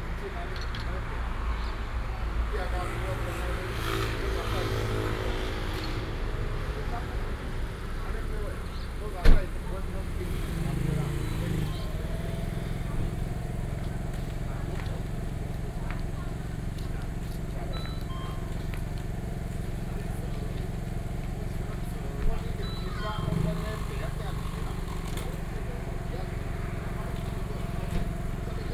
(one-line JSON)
{"title": "7-11朴子橋門市, Liujiao Township, Chiayi County - in the Square", "date": "2018-05-07 20:42:00", "description": "Square outside the convenience store, Bird call, Traffic sound\nBinaural recordings, Sony PCM D100+ Soundman OKM II", "latitude": "23.48", "longitude": "120.25", "altitude": "7", "timezone": "Asia/Taipei"}